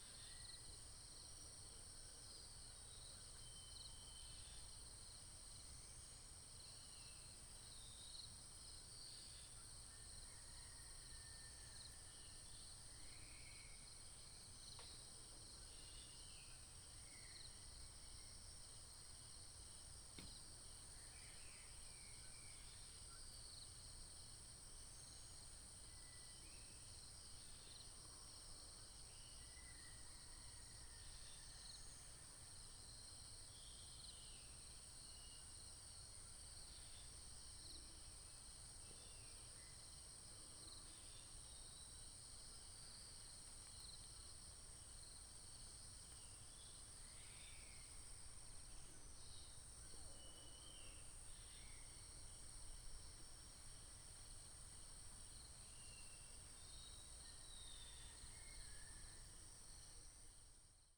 獅潭鄉中豐公路, Miaoli County - Early in the morning
Early in the morning next to the road, Insects, Chicken cry, Binaural recordings, Sony PCM D100+ Soundman OKM II
Miaoli County, Taiwan, 19 September 2017, 05:16